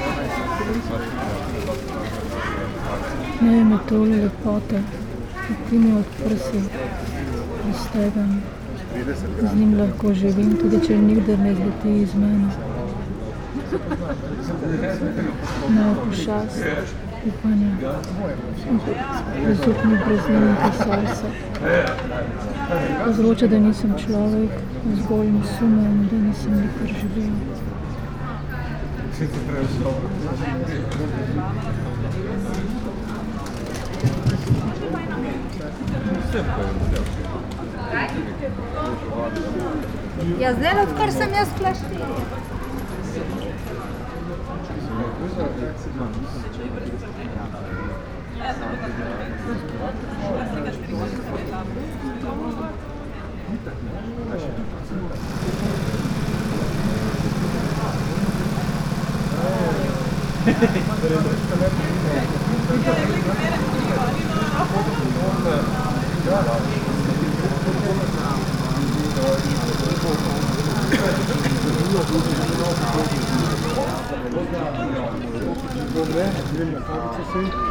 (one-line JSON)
{"title": "cafe cafetino, old town, Ljubljana - street flow, reading poem by Pier Paolo Pasolini", "date": "2015-05-19 17:27:00", "description": "wednesday afternoon, sitting outside, drinking espresso, reading poem Pošast ali Metulj? (Mostru o pavea?) by Pier Paolo Pasolini", "latitude": "46.05", "longitude": "14.51", "altitude": "312", "timezone": "Europe/Ljubljana"}